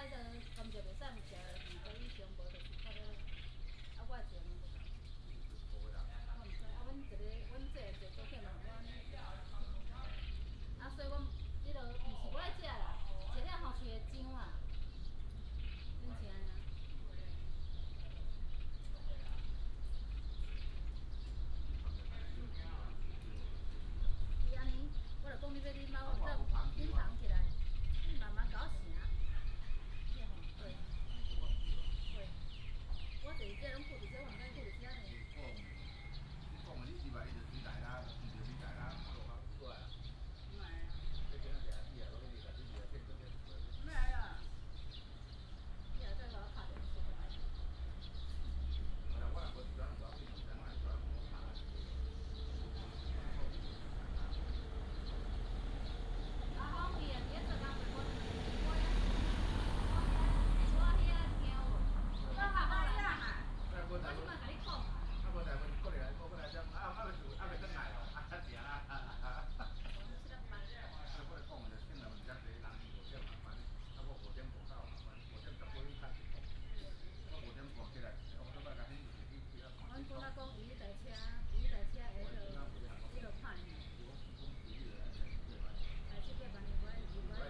枋山鄉枋山路, Fangshan Township - Small village
Small village, traffic sound, birds sound